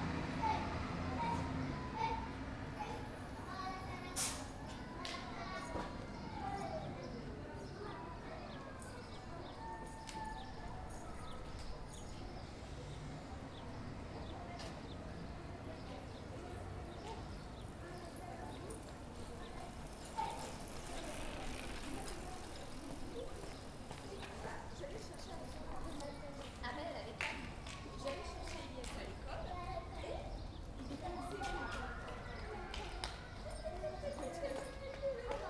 Rue de la Légion dHonneur, Saint-Denis, France - Opposite Legion dhonneur Bus Stop
This recording is one of a series of recording, mapping the changing soundscape around St Denis (Recorded with the on-board microphones of a Tascam DR-40).